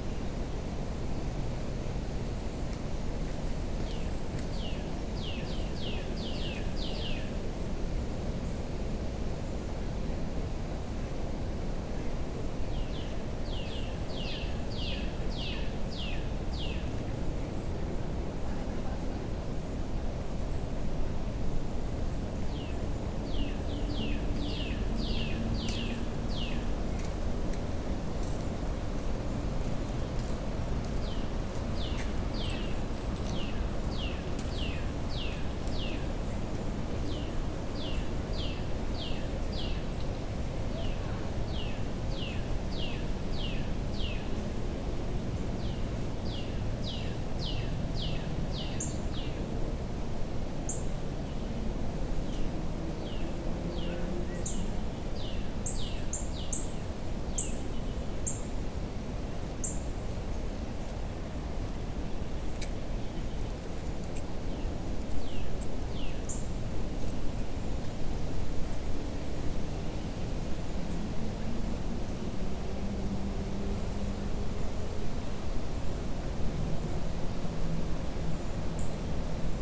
Right next to a bridge that passes over the creek at Tanyard Creek Trail. People are traveling over the bridge on the right side, and water can be heard faintly over the sound of trees blowing in the wind. Birds and traffic create sounds in the distance. A low cut was added in post.
[Tascam Dr-100mkiii & Primo Clippy EM-272]